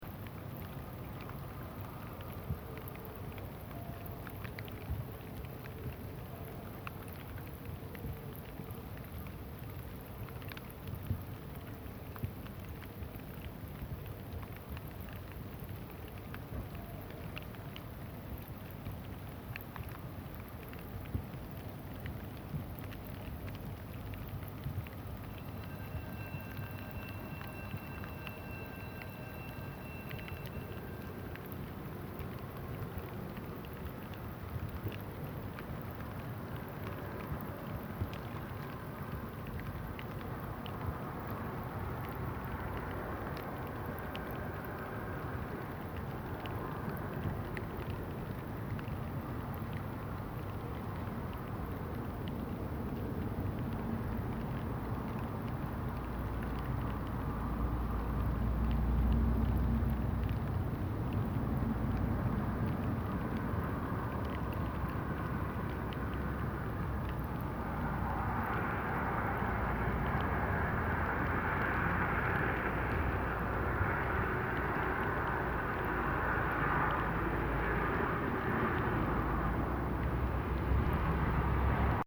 Rua da Praia, Pinhão, Portugal - Noite, chuva - Rua da Praia, Pinhão, Portugal
Noite, chuva - Rua da Praia, Pinhão, Portugal Mapa Sonoro do Rio Douro. Night and rain in Pinhao, Portugal. Douro River Sound Map
19 February 2014